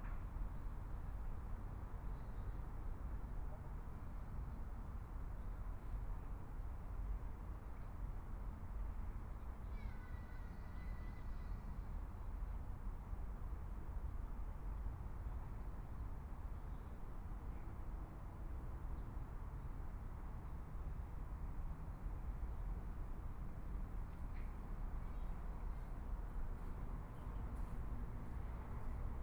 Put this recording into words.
in the Park, Environmental sounds, Traffic Sound, Tourist, Clammy cloudy, Binaural recordings, Zoom H4n+ Soundman OKM II